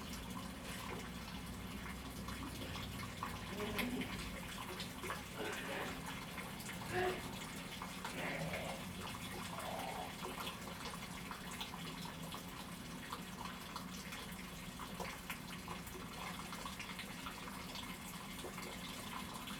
中城里, Yuli Township - In Hostel
In Hostel, The sound of water
Hualien County, Taiwan